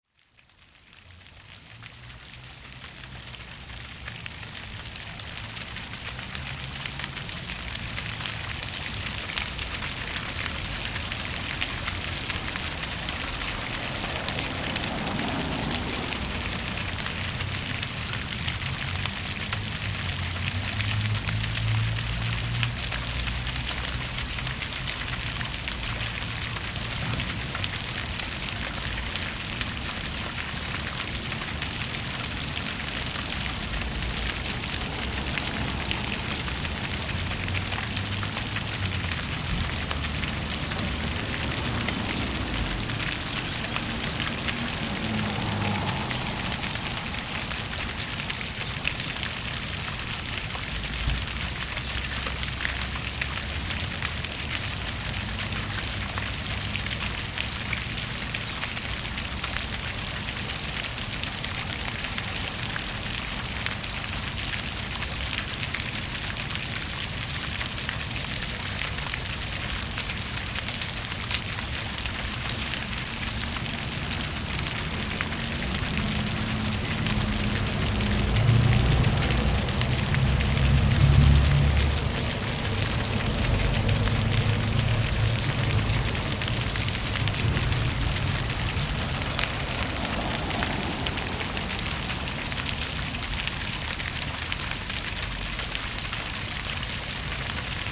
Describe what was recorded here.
Recorded with onboard Zoom H4n microphones. The sound of one of the Park avenue fountains as well as some birds from a bird feeder nearby.